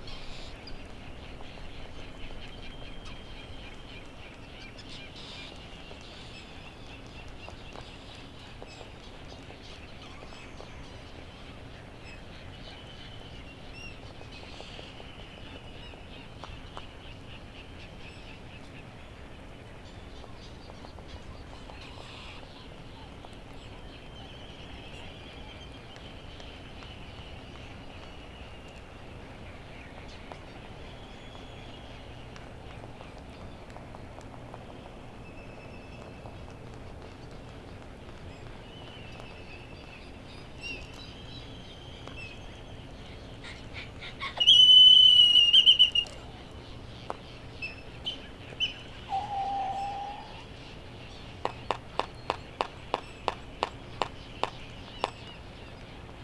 United States Minor Outlying Islands - Laysan albatross soundscape ...
Sand Island ... Midway Atoll ... laysan albatross soundscape ... open lavalier mics ... birds ... laysan albatross eh eh eh calls are usually made by birds on the nest ... though they may not be ... as the area is now covered with chicks ... bonin petrels ... white terns ... background noise ...